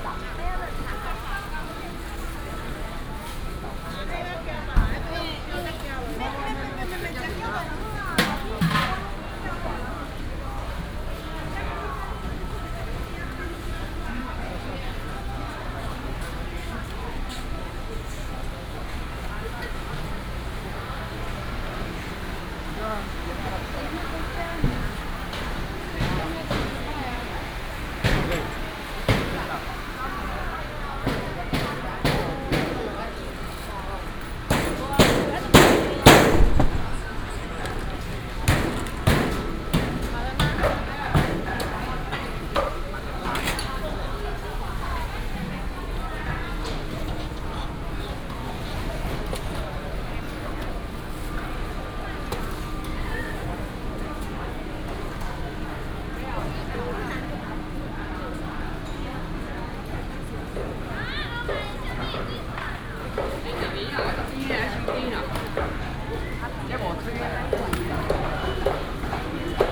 文心第一黃昏市場, Nantun Dist., Taichung City - walking in the Evening Market
walking in the Evening market, Traffic sound
Nantun District, Taichung City, Taiwan